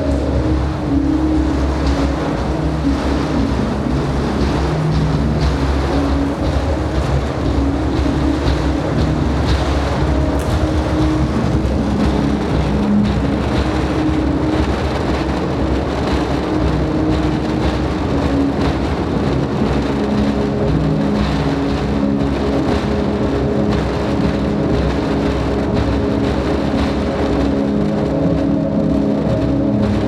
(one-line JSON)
{"title": "Riesaer Str., Dresden, Deutschland - Kältekonzert", "date": "2014-01-25 22:02:00", "description": "Window panes in former print shop vibrate during a concert by Jacob korn", "latitude": "51.08", "longitude": "13.73", "altitude": "116", "timezone": "Europe/Berlin"}